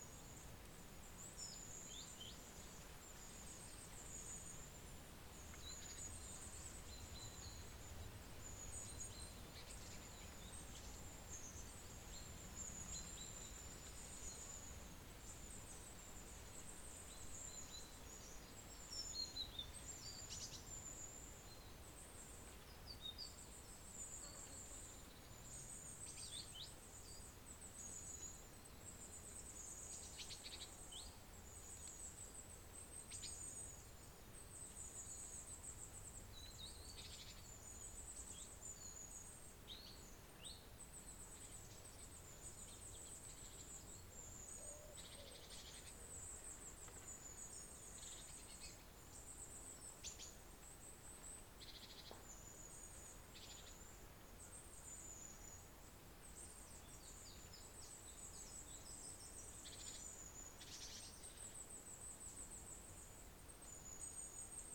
This recording was made using a Zoom H4N, in the woodlands at Embercombe. A fallow deer can be heard 'barking' and moving through the undergrowth. Embercombe is one of the core rewilding sites in Devon Wildland, as well managing the land for nature it is a retreat centre. This recording is part of a series of recordings that will be taken across the landscape, Devon Wildland, to highlight the soundscape that wildlife experience and highlight any potential soundscape barriers that may effect connectivity for wildlife.
England, United Kingdom, 21 July 2022